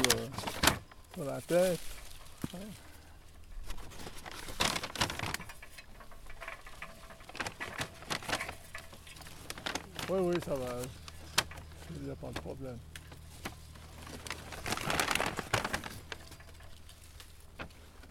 Espace culturel Assens, Apfelernte - Assens, Espace culturel, Apfelernte
Espace culturel Assens, Apfelernte an sehr sehr altem Baum
Assens, Switzerland, 2 October 2011, ~14:00